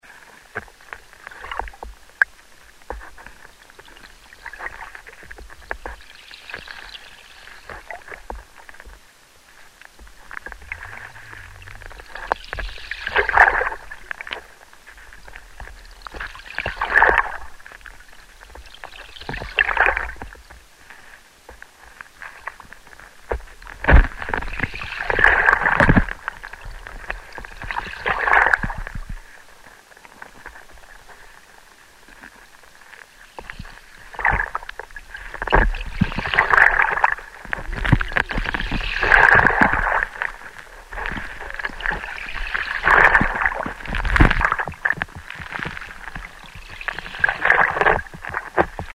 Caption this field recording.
Recording made with a contact microphone under the stones of the beach